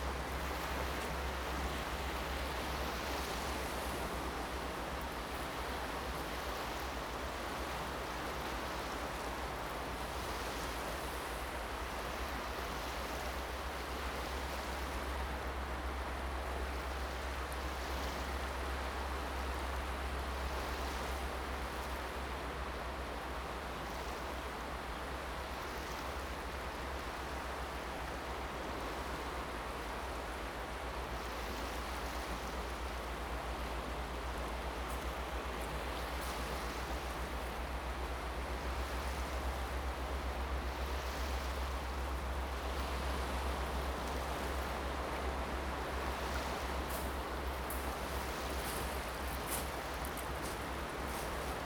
蛤板灣, Hsiao Liouciou Island - At the beach
Sound of the waves, At the beach
Zoom H2n MS+XY
Pingtung County, Taiwan, 1 November